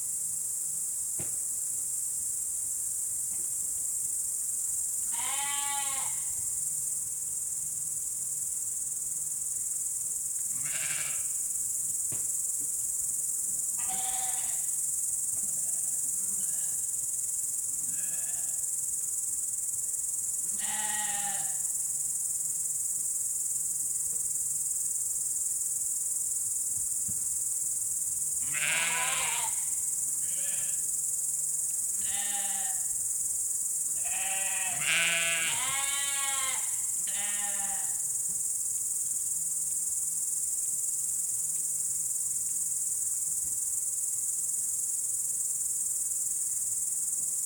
Seliste crickets and sheep
local sheep calling among the evening crickets